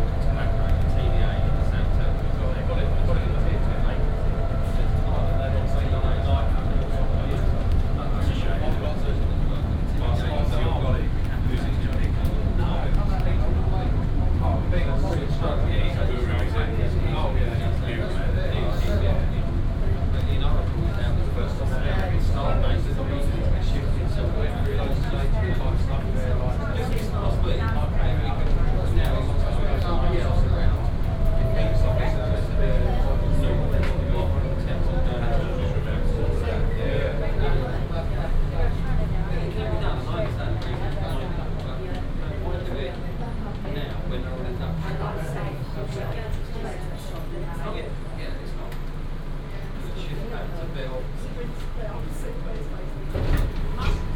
London Gatwick Airport (LGW), West Sussex, UK - shuttle ride to north terminal
London Gatewick airport, shuttle ride to the north terminal, elevator, airport ambience
(Sony PCM D50, OKM2 binaural)
5 June, 14:30